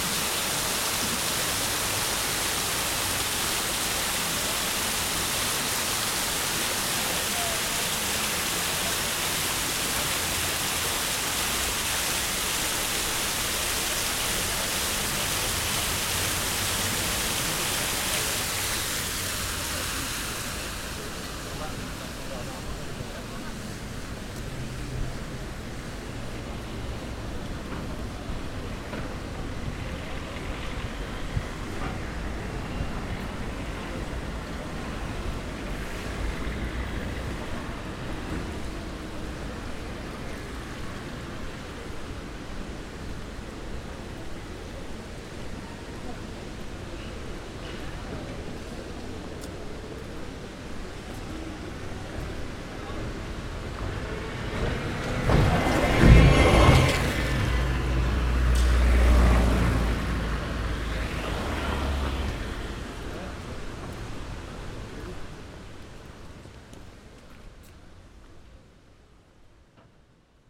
Pl. des Terreaux, Lyon, France - Place des Terreaux
Lace des Terreaux la fontaine les passants...
France métropolitaine, France, September 2003